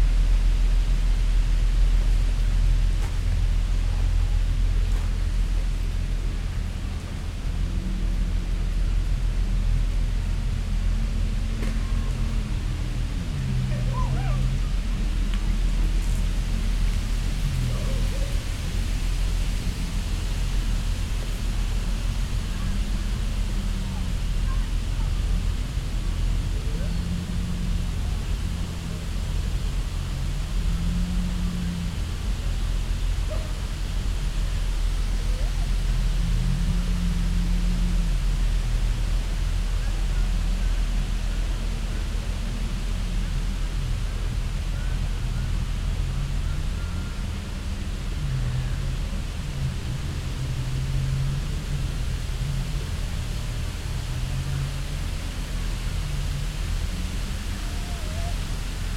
Jugendpark, Cologne, Germany - ship drone, wind in trees
small peninsula between river Rhein and Mülheim harbour. a cargo ship is passing downstream, wind in the trees
(Sony PCM D50, DPA4060)